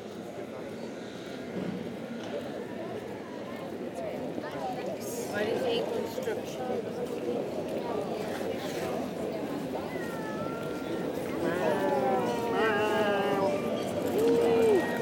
Antwerpen, Belgique - Grote Markt - Central square
Two persons walking with small scooters, on the cobblestones of the Antwerpen central square.